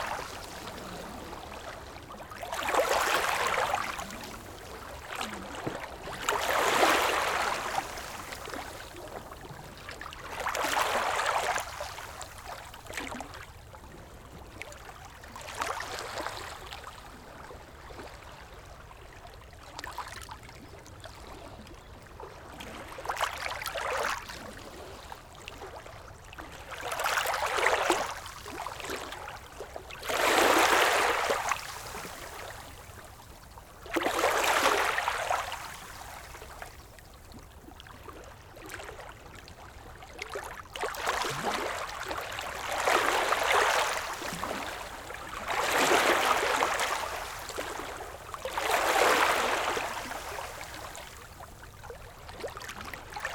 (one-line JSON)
{"title": "Degerhamnsvägen, Degerhamn, Sverige - Small waves stoney beach", "date": "2020-09-10 14:18:00", "description": "Small waves stoney beach. Recorded with zoom H6 and Rode ntg 3. Øivind Weingaarde.", "latitude": "56.36", "longitude": "16.41", "timezone": "Europe/Stockholm"}